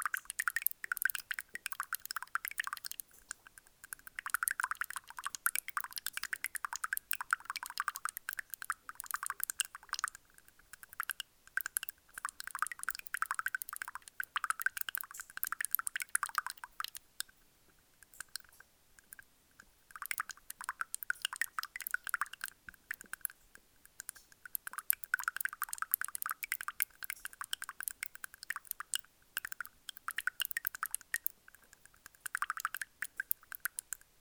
In an underground iron mine, a small stream makes strange noises inside the gravels.
Privas, France, April 2016